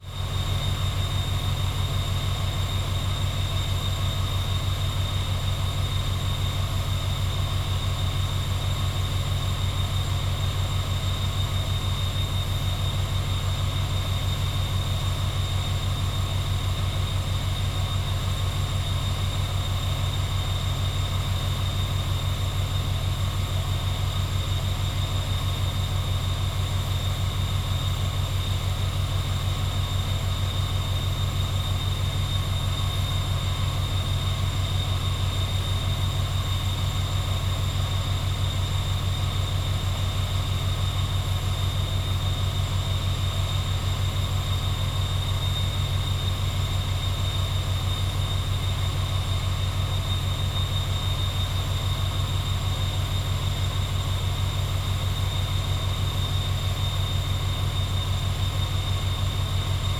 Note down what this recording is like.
XY stereo recording - noisy powerplant on the way to the beachside. ZoomH2n